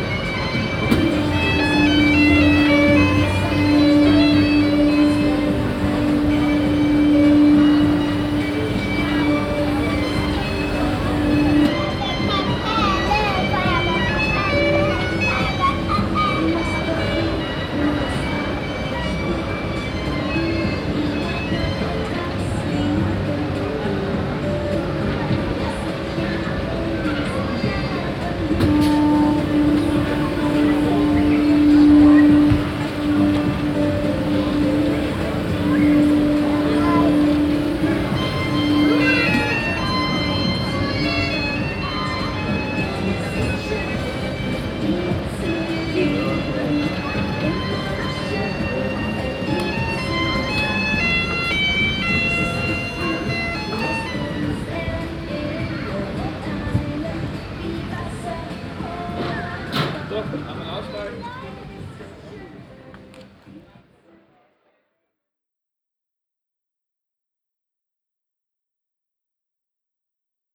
Stadtkern, Essen, Deutschland - essen, kettwiger str, children's merry-go-round
In der Fussgänger - Einkaufszone. Der Klang eines Kinderkarussels während der Ostermarkttage.
In the pedestrian - shopping zone. The sound of a children's merry-go-round during the easter market days.
Projekt - Stadtklang//: Hörorte - topographic field recordings and social ambiences